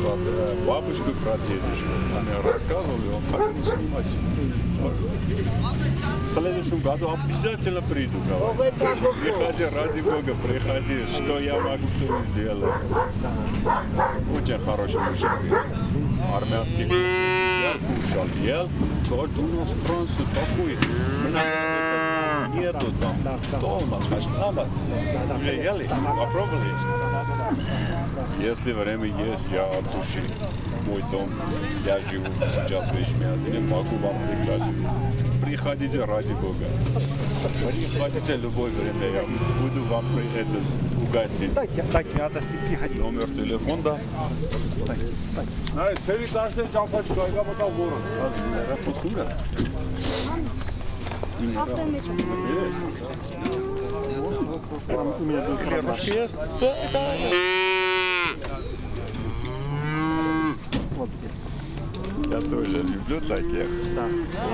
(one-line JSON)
{"title": "Artashat, Armenia - Cattle Market near Artashat, Armenia", "date": "2016-02-14 09:03:00", "description": "Leaving Artashat, the regional administrative capital, behind, we come to an improvised cattle market alongside the road. Sheep, cows, dogs and men standing in the grass and the mud, making deals. We strike up a conversation with one of the men and, as always happens in the Caucasus, he invites us to visit him if we are ever in his town.", "latitude": "39.94", "longitude": "44.56", "altitude": "819", "timezone": "Asia/Yerevan"}